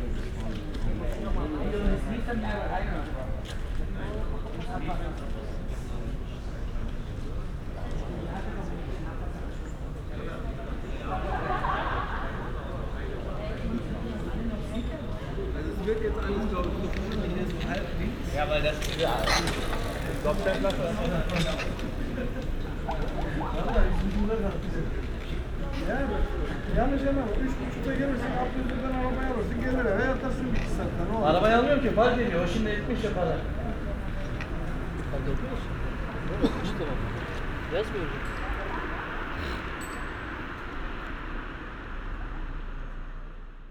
Berlin: Vermessungspunkt Maybachufer / Bürknerstraße - Klangvermessung Kreuzkölln ::: 23.07.2013 ::: 01:50
Berlin, Germany, 23 July, 01:50